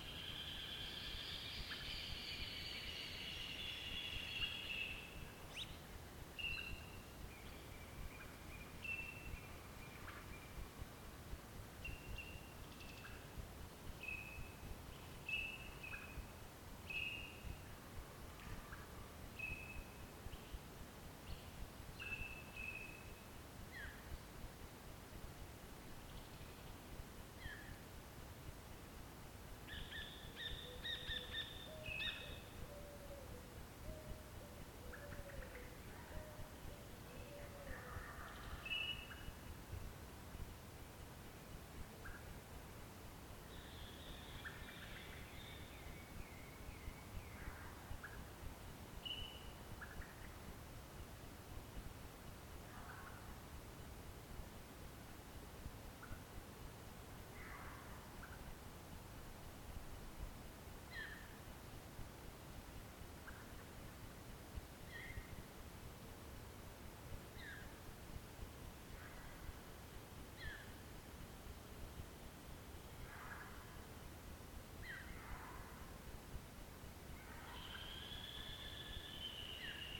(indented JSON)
{
  "title": "Coronel Segui, Provincia de Buenos Aires, Argentina - Dawn 1",
  "date": "2021-08-23 05:28:00",
  "description": "Awakening of the dawn, some birds, faraway foxes, mud birds",
  "latitude": "-34.88",
  "longitude": "-60.43",
  "altitude": "58",
  "timezone": "America/Argentina/Buenos_Aires"
}